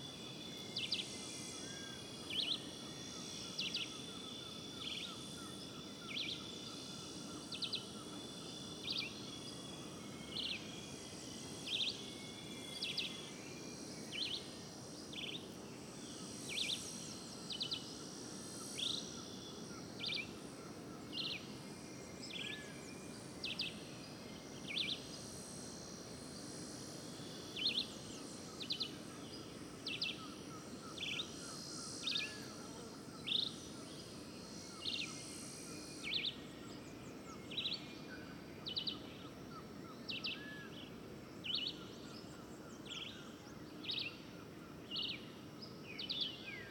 Pedra Grande, Cantareira - São Paulo - Brazil Atlantic Forest - Pedra Grande - city overview